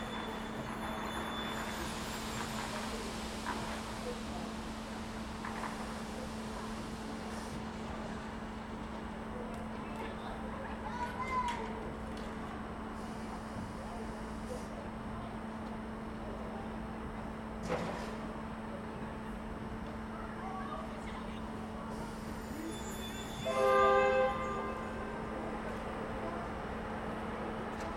Having accompanied a friend to the train, I did a short recording with a Zoom H2n in surround mode as lots was happening: a large group of people with kids were waiting for a different train, a local one going to villages around the city. Kids were shouting and throwing firecrackers at each other. Once their train arrives, they climb in and the soundscape gives in to the more mechanical noises of the trains and signals (departing carriages, a locomotive passing by etc.)
România, 30 December 2020